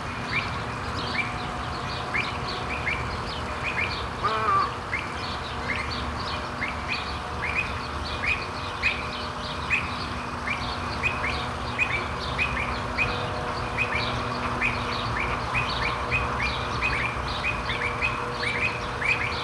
Grugapark, Virchowstr. 167 a, Essen, Deutschland - essen, gruga park, bird free fly areal
Im Gruga Park in der Vogelfreiflug Anlage. Die Klänge der Vogelstimmen.
Inside the Gruga Park in an areal where birds are caged but are enabled to fly around. The sound of the bird voices.
Projekt - Stadtklang//: Hörorte - topographic field recordings and social ambiences
Essen, Germany, 8 April 2014, 15:00